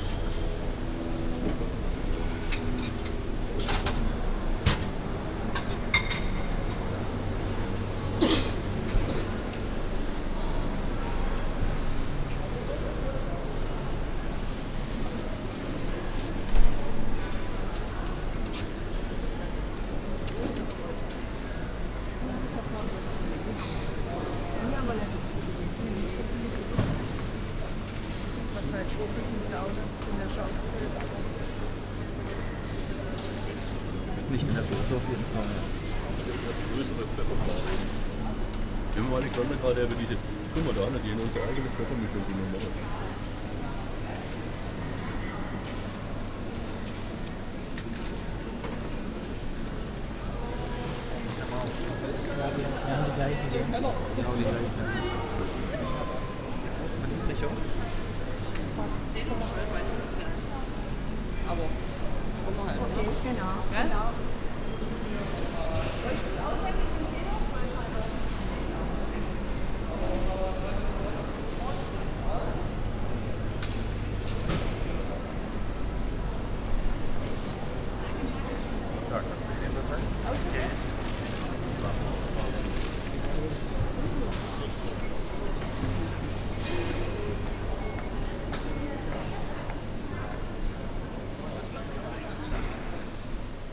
stuttgart, indoor market hall
inside the traditional stuttgart market hall - a walk thru the location
soundmap d - social ambiences and topographic field recordings
20 June 2010, 14:28